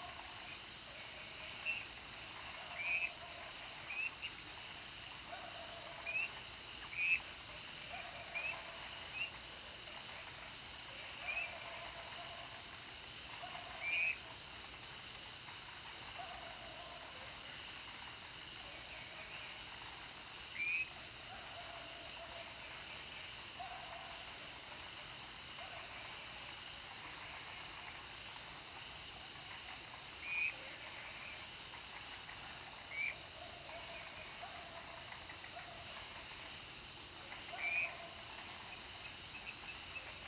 中路坑, 埔里鎮桃米里 - Sound of insects and Frogs
Sound of insects, Frogs chirping, Faced woods
Zoom H2n MS+XY
Puli Township, 機車道, 17 May 2016, ~19:00